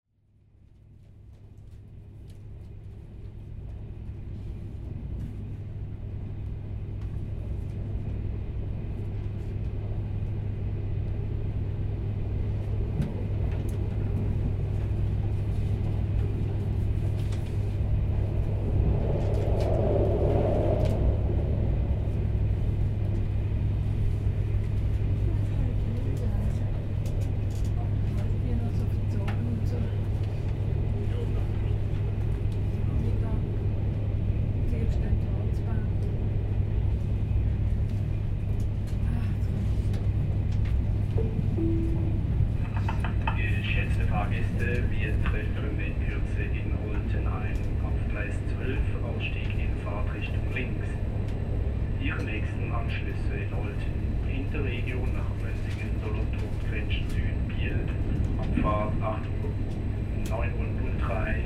Ankunft Olten Ansage in der Eisenbahn
Ankunftsansage im Eisenbahnwagon, Olten, Durchsagen der Anschlussverbindungen und Weiterfahrt
Trimbach, Schweiz, 10 June 2011, 10:15